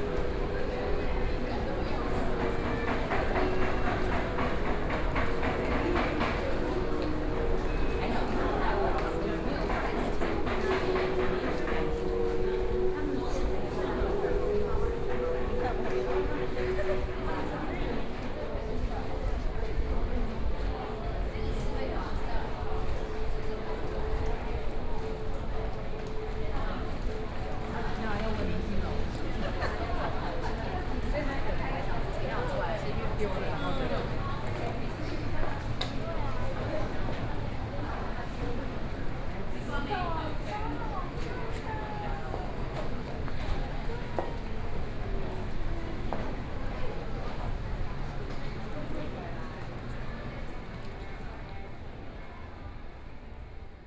{"title": "ESLITE SPECTRUM SONGYAN STORE - soundwalk", "date": "2013-09-10 14:26:00", "description": "ESLITE SPECTRUM SONGYAN STORE, Sony PCM D50 + Soundman OKM II", "latitude": "25.04", "longitude": "121.56", "altitude": "9", "timezone": "Asia/Taipei"}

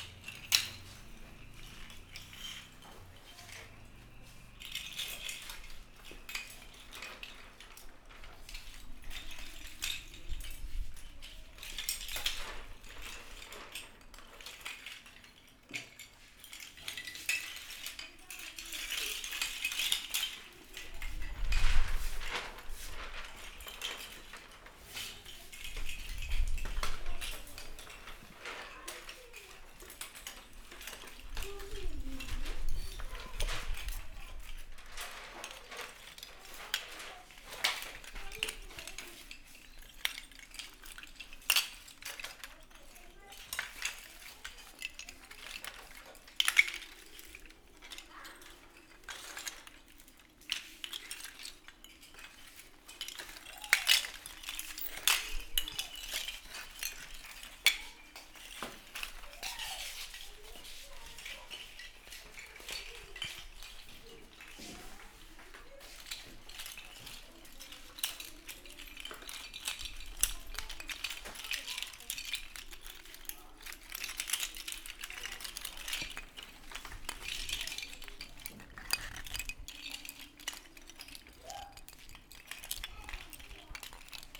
{"title": "Guangming Rd., Fangyuan Township - Oyster Shell", "date": "2014-03-08 16:42:00", "description": "Oyster Shell, in the Small village, Children's sound, Traffic Sound\nZoom H6 MS+ Rode NT4, Best with Headphone( SoundMap20140308- 5 )", "latitude": "23.93", "longitude": "120.32", "altitude": "5", "timezone": "Asia/Taipei"}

